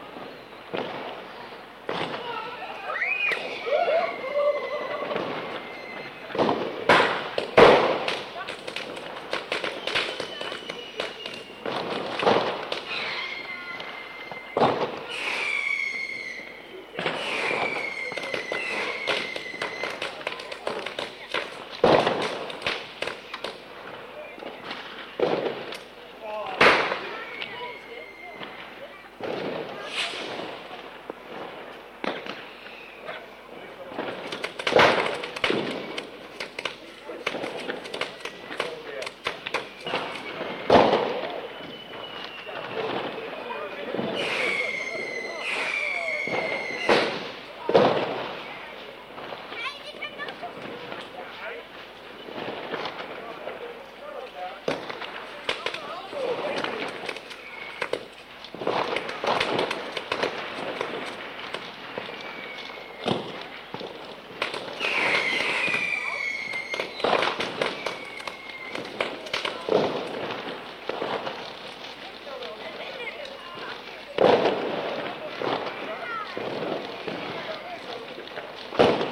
Haaksbergen, Nederland - New Years Eve 1988-1989
I was going through a box of old cassettes when I found this low fidelity recording I made on new years eve 1988 from my bedroom window when still living at my parents place.
I don't know the recording specs anymore. It was a consumer cassette player with two completely different mics.